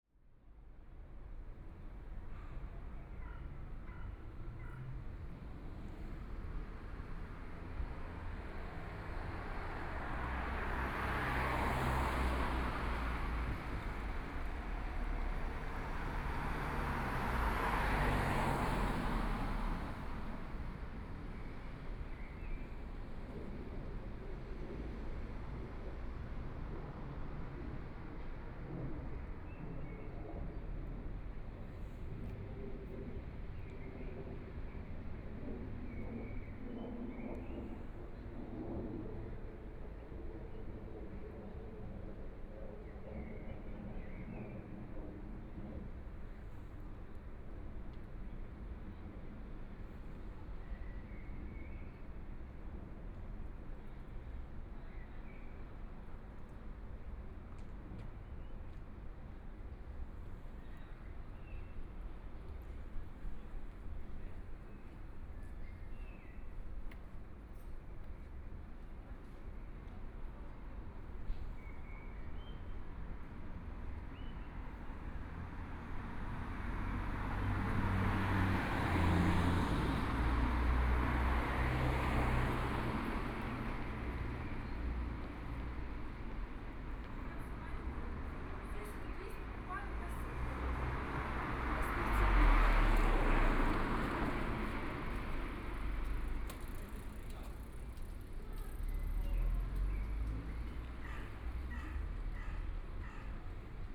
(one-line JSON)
{"title": "schönfeldsraße 19 rgb., 慕尼黑德國 - soundwalk", "date": "2014-05-10 19:14:00", "description": "Walking in and outside the gallery space, Birdsong, Traffic Sound", "latitude": "48.15", "longitude": "11.58", "altitude": "519", "timezone": "Europe/Berlin"}